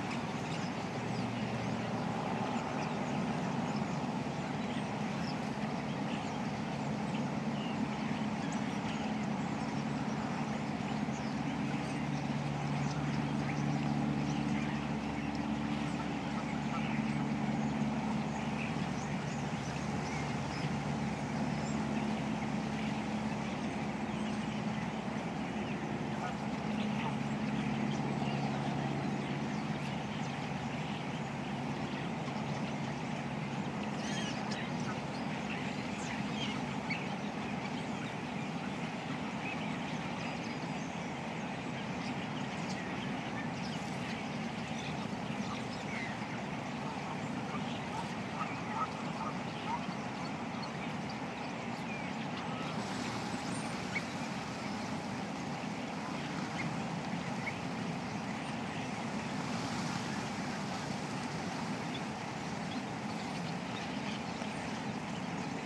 Otmoor RSPB reserve, Oxford, UK - Starlings coming in to roost after murmuration
An estimated 50,000 birds roosted in the reed beds during the winter months, and large numbers still do. I put the recorder in a Hawthorn bush and retreated to some cover to watch the birds coming in to roost in the reed beds. Greylag geese flew over at various points and Mallard and other wildfowl can be heard, along with a couple af light aircraft of course....Sony M10 with built in mics.
South East, England, United Kingdom, 23 December 2018